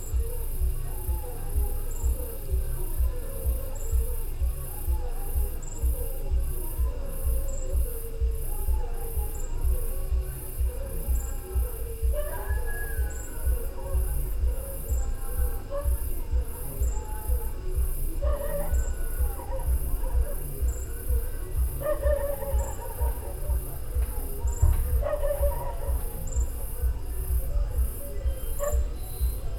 ...night sounds and voices from at least two bars in the neighbourhoods…. Night birds and insects and Binga’s dogs tuning in...